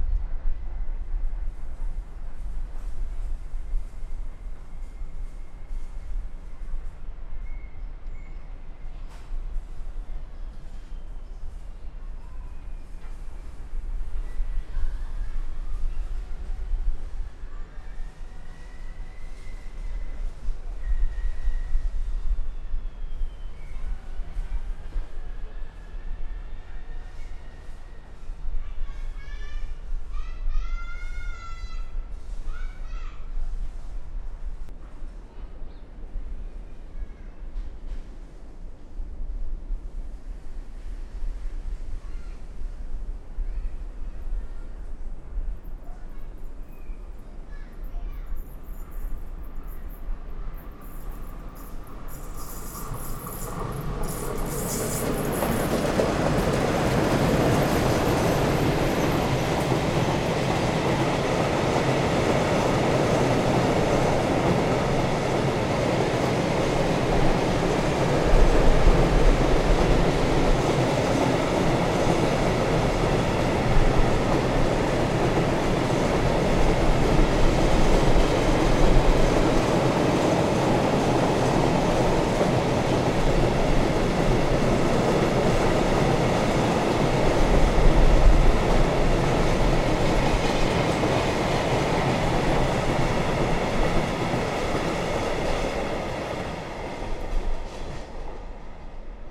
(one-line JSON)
{"title": "cologne, sued, kyllstrasse, züge und kindergarten", "date": "2008-09-26 10:50:00", "description": "zugverkehr auf bahngleisen für güterverkehr und ICE betrieb nahe kindergarten, morgens\nsoundmap nrw:", "latitude": "50.92", "longitude": "6.96", "altitude": "54", "timezone": "Europe/Berlin"}